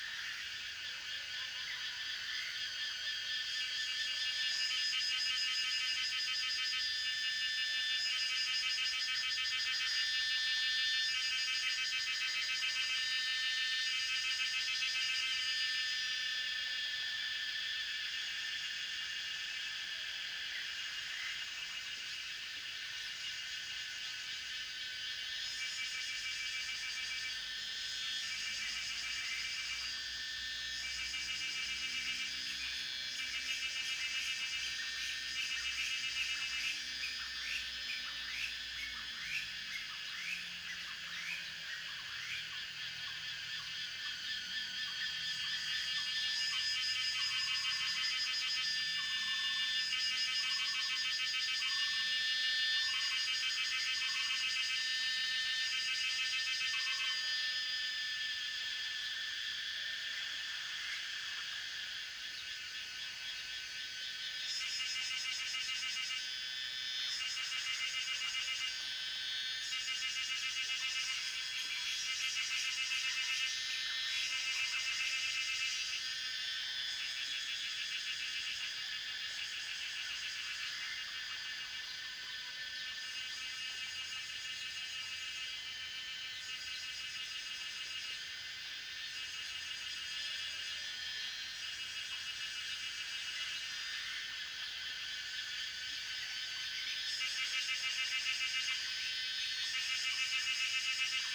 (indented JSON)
{
  "title": "中路坑, 桃米里, Puli Township - Cicada and Bird sounds",
  "date": "2016-06-06 17:50:00",
  "description": "Cicada sounds, Bird sounds, Frogs chirping\nZoom H2n Spatial audio",
  "latitude": "23.95",
  "longitude": "120.92",
  "altitude": "539",
  "timezone": "Asia/Taipei"
}